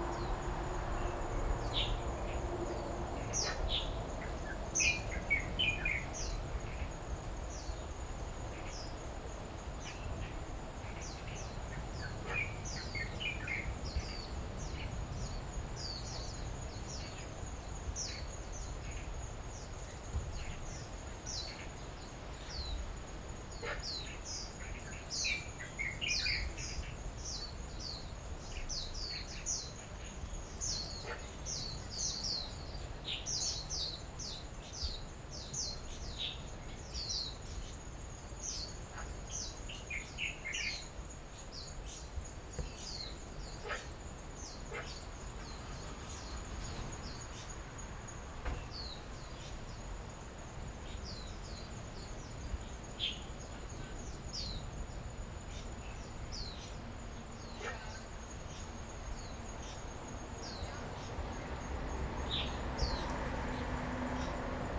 Under the tree, Hot weather, Birdsong, Traffic Sound
Zoom H6 MS mic+ Rode NT4